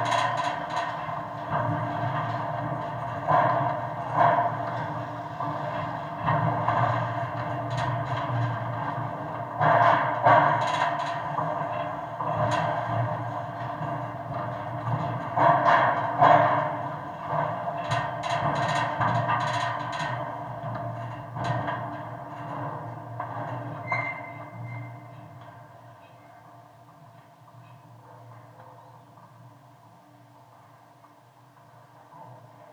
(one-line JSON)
{"title": "Gdańsk, Poland - Most / Bridge / kontaktowe /contact mics", "date": "2015-06-08 10:04:00", "description": "Most mikrofony kontaktowe, contact mics, rec. Rafał Kołacki", "latitude": "54.34", "longitude": "18.83", "timezone": "Europe/Warsaw"}